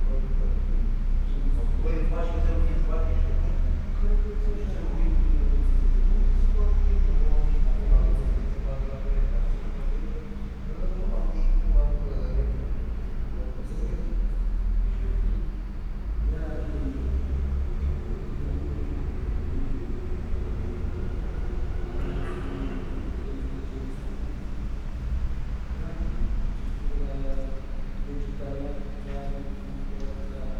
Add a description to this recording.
walking in the underground level, below the bus terminal. many homeless people hanging out here, not so many people passing-by. (Olympus LS5, Primo EM172)